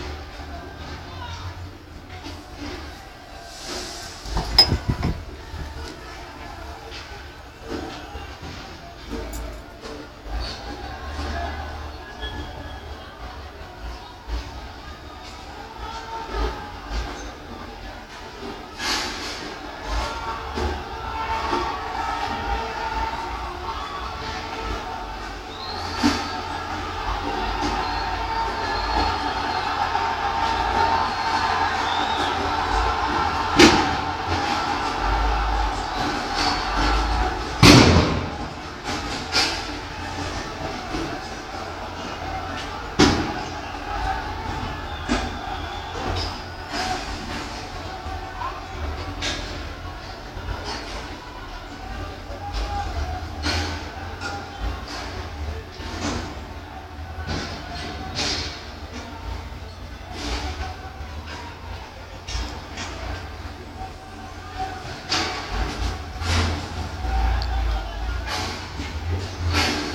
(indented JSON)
{
  "title": "Egyptian Clashes",
  "date": "2011-01-28 13:07:00",
  "description": "After the friday 28 muslim prayer people started to protest against the 30 years regime. Here the clashes between people and police.",
  "latitude": "31.19",
  "longitude": "29.90",
  "altitude": "15",
  "timezone": "Africa/Cairo"
}